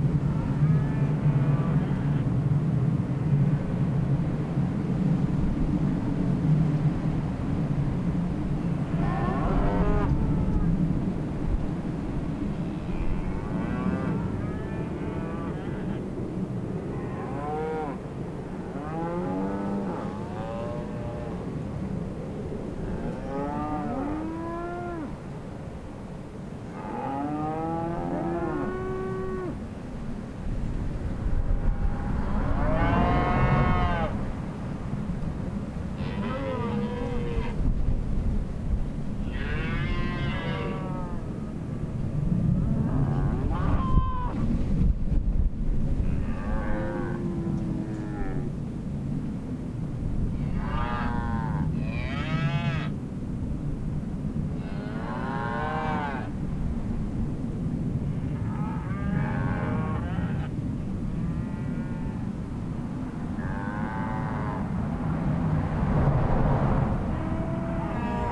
{"title": "Olancha, CA, USA - Cows Mooing and Ambient Traffic", "date": "2022-08-25 16:00:00", "description": "Metabolic Studio Sonic Division Archives:\nHerd of cattle grazing and mooing alongside highway, along with ambient sounds of cars and airplanes. Recorded on Zoom H4N", "latitude": "36.28", "longitude": "-118.00", "altitude": "1112", "timezone": "America/Los_Angeles"}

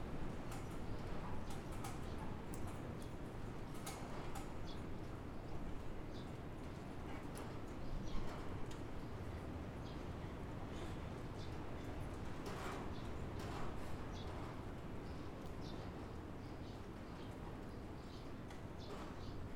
{"title": "Buzludzha, Bulgaria, inside hall - Buzludzha, Bulgaria, large hall 2", "date": "2019-07-15 12:17:00", "description": "Some minutes later...", "latitude": "42.74", "longitude": "25.39", "altitude": "1425", "timezone": "Europe/Sofia"}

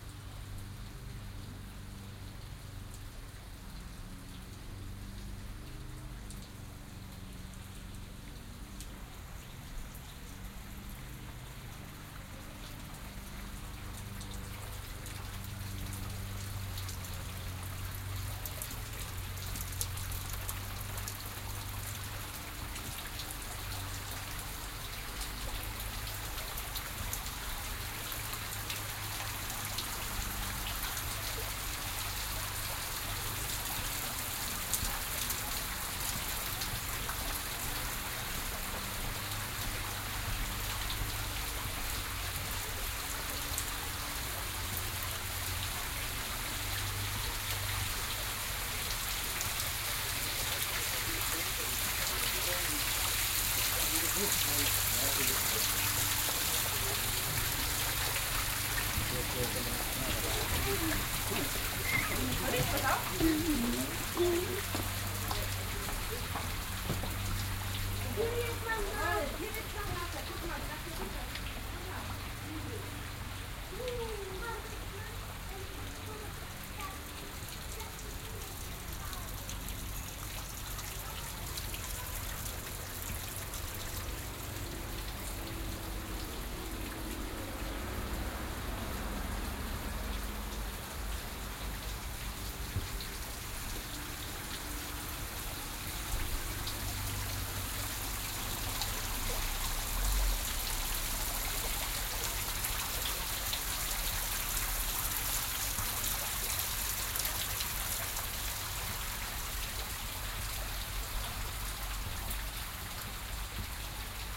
{"title": "Gradierwerk, Bad Orb, Deutschland - Walk through the Gradierwerk", "date": "2016-07-28 13:27:00", "description": "The Gradierwerk is a location for tourists, where they could see how until the 19th century water was treated to gain salt out of it. The water is rinsing down bushwoods in the Gradierwerk, the amount of water changes, as you can hear during the walk.", "latitude": "50.22", "longitude": "9.35", "altitude": "187", "timezone": "Europe/Berlin"}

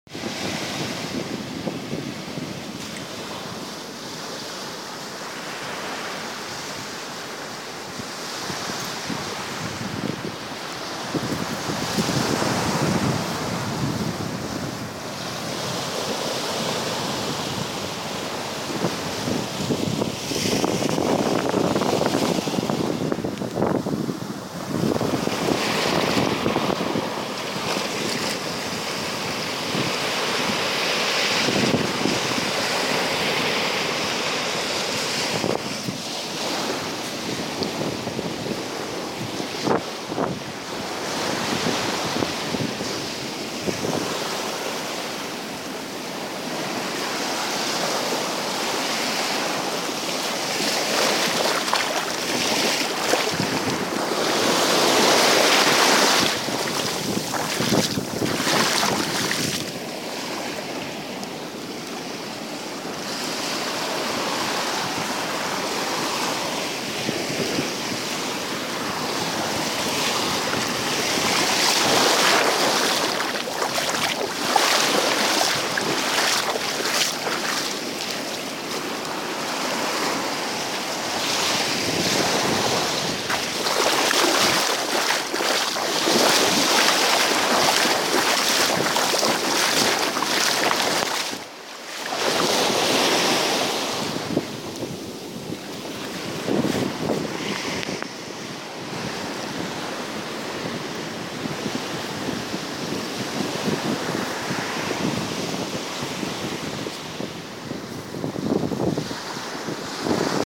{"title": "Sainte-Anne, Martinique - Anse Esprit", "date": "2015-01-18 14:15:00", "description": "Au bord de l'océan, à Anse Esprit, plage déserte.", "latitude": "14.45", "longitude": "-60.82", "altitude": "7", "timezone": "America/Martinique"}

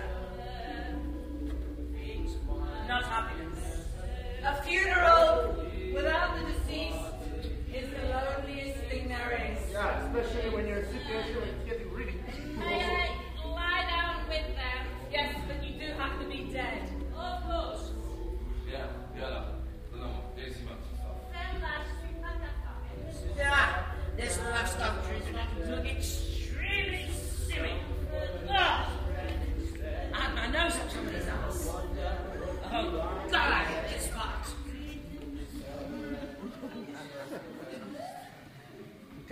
{"title": "essen, zeche zollverein, pact - essen, zeche zollverein, pact, performance, jan lauwers & needcompany - the deer house", "date": "2009-05-11 09:37:00", "description": "audio excerpt of a performance of the Jan Lauwers & Needcompany piece - the deer house at pact zollverein\nsoundmap nrw: social ambiences/ listen to the people - in & outdoor nearfield recordings", "latitude": "51.49", "longitude": "7.05", "altitude": "51", "timezone": "GMT+1"}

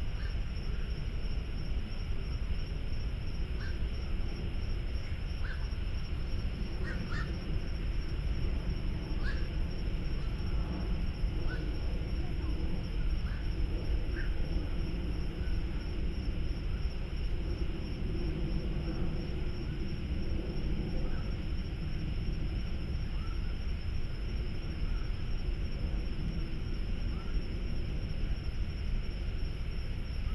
{"title": "北投區關渡里, Taipei City - Environmental sounds", "date": "2014-03-17 18:25:00", "description": "Traffic Sound, Environmental sounds, Birdsong, Frogs\nBinaural recordings", "latitude": "25.12", "longitude": "121.47", "timezone": "Asia/Taipei"}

